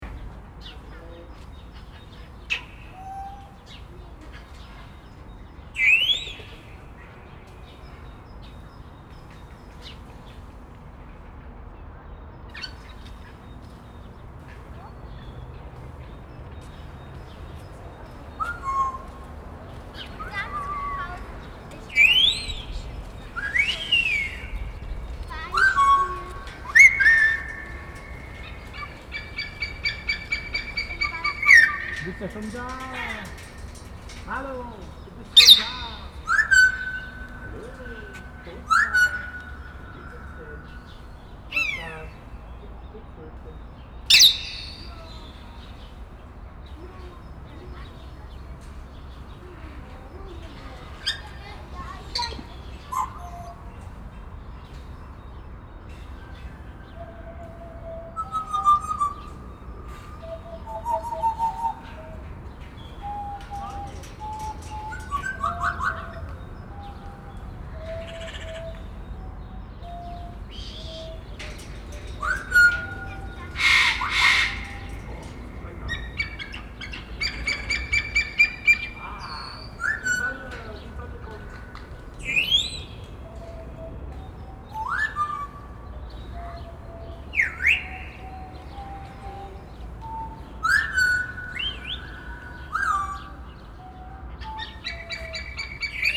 Grugapark, Virchowstr. 167 a, Essen, Deutschland - essen, gruga park, parrot aviary
Inside the Gruga Park at the birdcages. The sounds of parrots in their aviary plus the voice and bird sound imitation of a visitor.
Im Gruga Park an den Vogelkäfigen. Der Klang von Papageienstimmen in ihren Volieren und die Stimme eines Besuchers der Vogelstimmen nachahmt.
Projekt - Stadtklang//: Hörorte - topographic field recordings and social ambiences
Essen, Germany, 9 April 2014